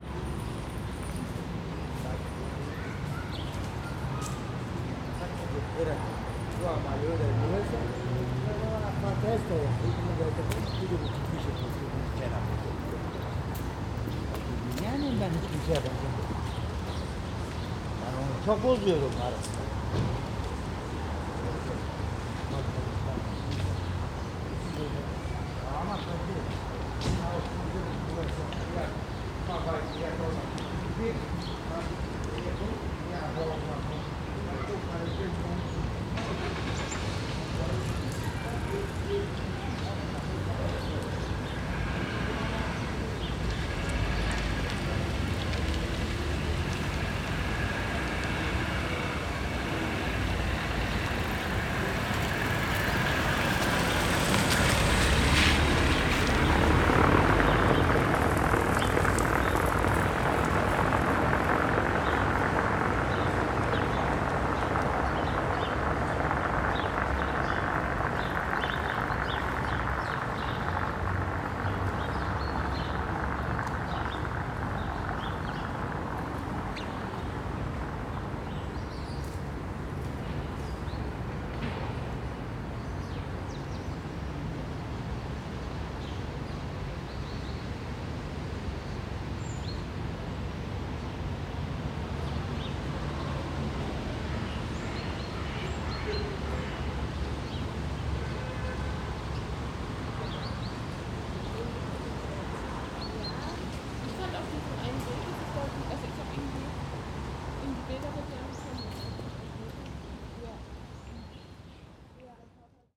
Stockholmer/Gotenburger Straße, Berlin - people, bikes, and a car passing by, birds.
[I used the Hi-MD-recorder Sony MZ-NH900 with external microphone Beyerdynamic MCE 82]
13 October 2012, ~14:00